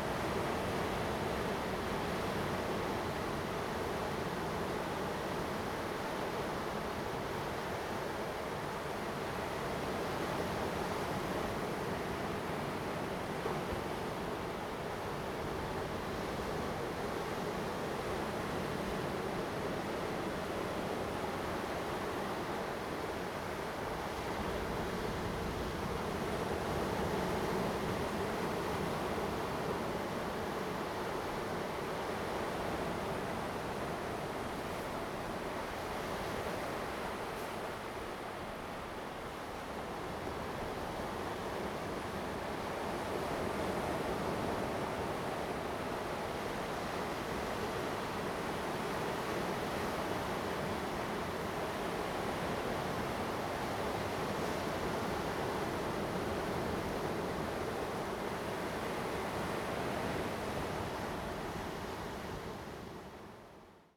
General Rock, Lüdao Township - Waves
Waves, On the coast
Zoom H2n MS +XY